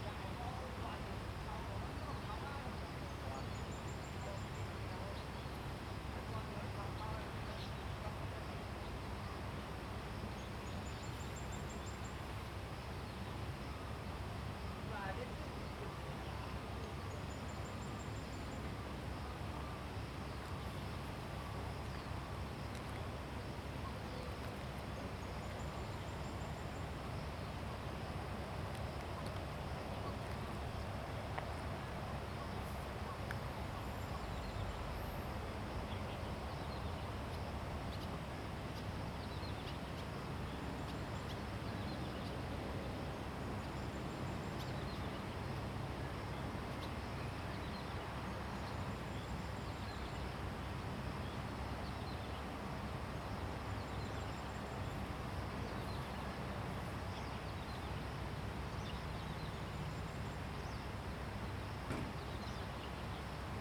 TaoMi River, Puli Township - Next to the river
Bird calls, sound of water streams
Zoom H2n MS+XY